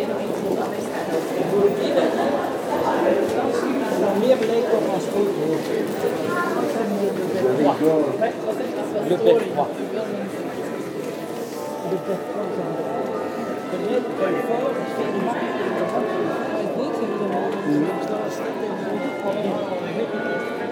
Brugge, België - Bruges belfry
Belfort. A flood of tourists near the belfry, whose carillonneur plays with an undeniable talent.
Brugge, Belgium